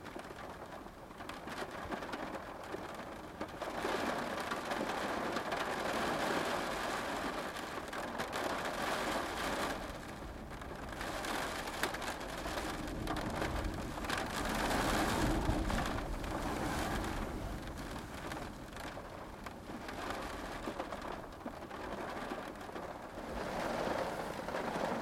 Olafsvik - Wind and Storm in the car
Wind, rain and storm outside the car.